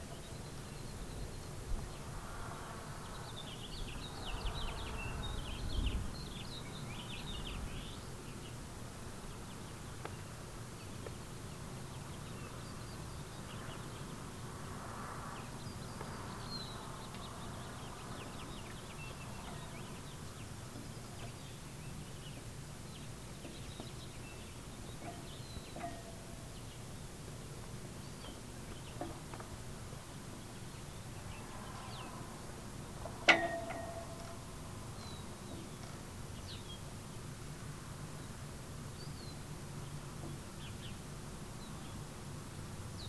{"title": "Richards Ave, Santa Fe, NM, USA - Two Flags Flapping on Poles", "date": "2018-06-22 10:30:00", "description": "Two Flags in the wind atop Poles at the entrance of Santa Fe Community College. The ropes bang against the poles. Recorded with Zoom H4 and two Electro-Voice 635A/B Dynamic Omni-Directional mics.", "latitude": "35.60", "longitude": "-106.00", "altitude": "2015", "timezone": "America/Denver"}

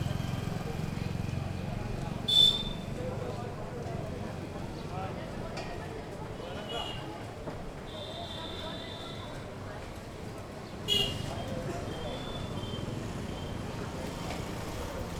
{"title": "Badi Basti, Pushkar, Rajasthan, India - Pushkar street from a roof terrace", "date": "2010-09-11 10:02:00", "latitude": "26.49", "longitude": "74.55", "altitude": "483", "timezone": "Asia/Kolkata"}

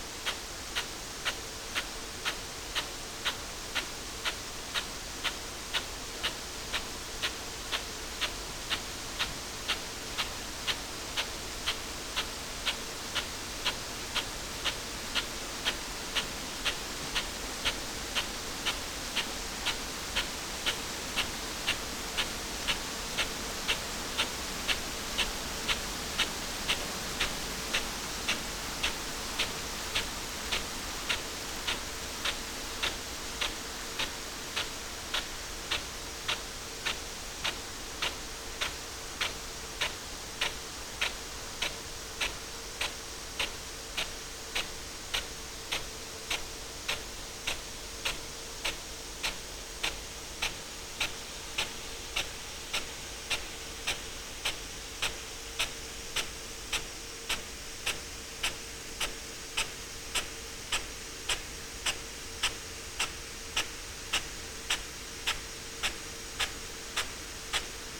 {"title": "Malton, UK - potato irrigation ...", "date": "2022-07-16 06:05:00", "description": "potato irrigation ... bauer rainstar e41 with irrigation sprinkler ... xlr sass on tripod to zoom h5 ... absolutely love it ...", "latitude": "54.13", "longitude": "-0.56", "altitude": "104", "timezone": "Europe/London"}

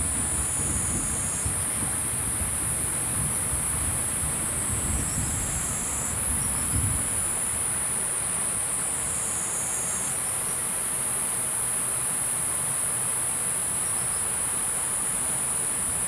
{"title": "桃米溪, 紙寮坑, 桃米里 - Sound of water and Insect", "date": "2016-07-27 16:07:00", "description": "Bridge, Insect sounds, Sound of water, The sound of thunder\nZoom H2n MS+XY +Spatial audio", "latitude": "23.94", "longitude": "120.93", "altitude": "478", "timezone": "Asia/Taipei"}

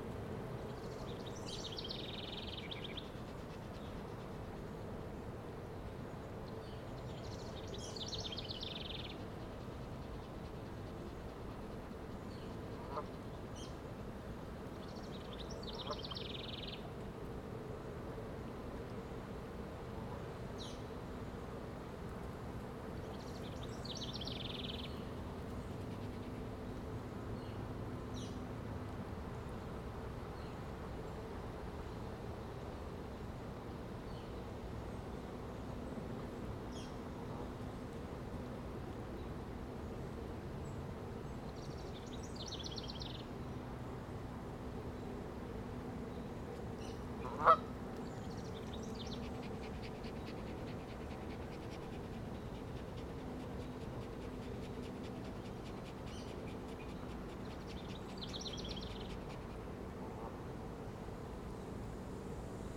{"title": "Merritt Trail, St. Catharines, ON, Canada - The Twelve | Abandoned GM Lands", "date": "2020-07-24 13:15:00", "description": "The lower Twelve Mile Creek in the City of St. Catharines ON has been entirely reconstructed for industrial use since the mid nineteenth century, first for the Welland Canal then for DeCew Generating Station. An abandoned General Motors plant sits on the east side of the lower Twelve. I set my H2n recorder opposite that site on the Merritt Trail on the west side of the creek then threw a hydrophone some meters out into the water. Above water, we hear many birds, Canada Geese honking and shaking, my dog panting and city traffic. Below water, the sounds are a mystery as there is no way to see the life that carries on in this murky water. One week before this recording, an environmental report was submitted to the City regarding storm sewer outfalls from the abandoned plant, including that the former GM sewer and municipal sewer outfalls exceed the PCB threshold. The Twelve empties into Lake Ontario, one of the Great Lakes which hold 23% of the world's surface fresh water.", "latitude": "43.17", "longitude": "-79.27", "altitude": "88", "timezone": "America/Toronto"}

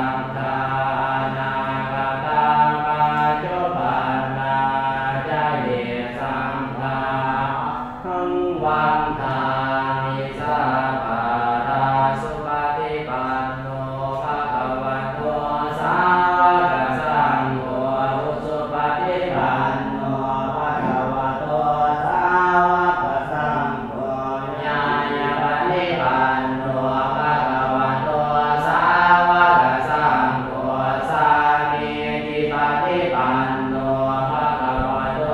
{"title": "Luang Prabang, Wat Mai, Ceremony", "date": "2009-04-22 18:00:00", "latitude": "19.89", "longitude": "102.13", "altitude": "302", "timezone": "Asia/Vientiane"}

